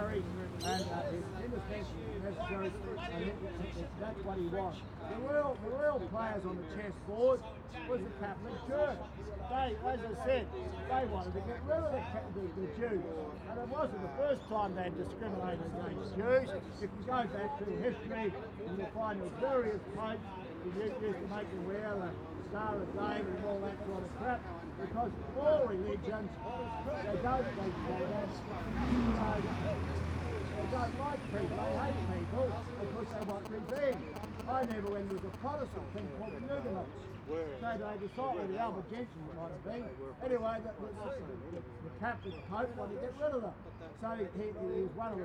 neoscenes: speakers corner, Botanic Garden
Sydney NSW, Australia